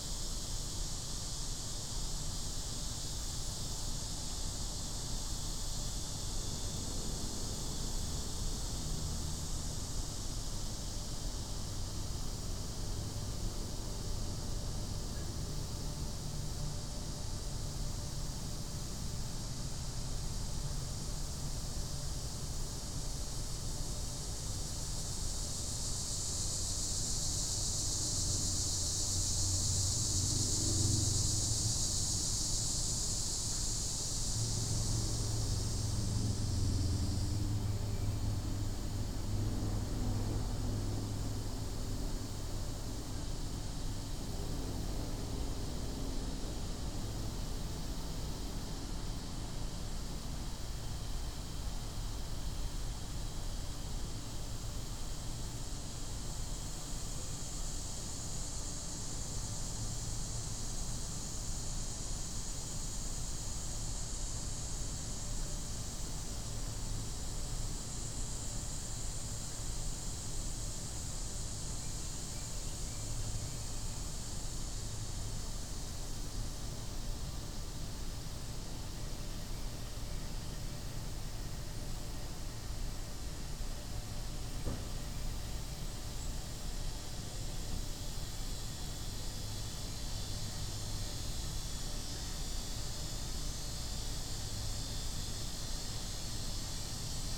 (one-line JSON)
{"title": "City Greenway", "date": "2010-07-18 11:40:00", "description": "11:40am local time on a pedestrian and bicycle greenway inside the city limits, wld, world listening day", "latitude": "35.84", "longitude": "-78.69", "altitude": "69", "timezone": "America/New_York"}